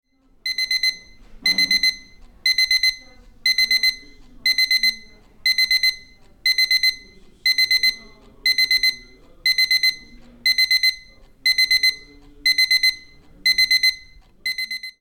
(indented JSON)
{
  "title": "bonifazius, bürknerstr. - Quartzwecker",
  "date": "2008-12-04 16:10:00",
  "description": "Quartzwecker mit Standard-Beep / quart clock with standard beep",
  "latitude": "52.49",
  "longitude": "13.43",
  "altitude": "50",
  "timezone": "Europe/Berlin"
}